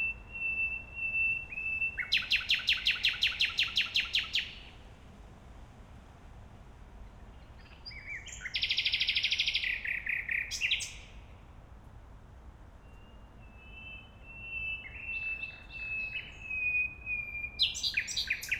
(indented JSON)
{
  "title": "Mauerweg / Heidekampweg, Berlin, Deutschland - nightingales",
  "date": "2021-05-24 23:40:00",
  "description": "Berlin, Mauerweg, two nightingales singing, S-Bahn trains passing-by occasionally, this recording is closer to the second nightingale\n(SD702, AT BP4025)",
  "latitude": "52.47",
  "longitude": "13.47",
  "altitude": "33",
  "timezone": "Europe/Berlin"
}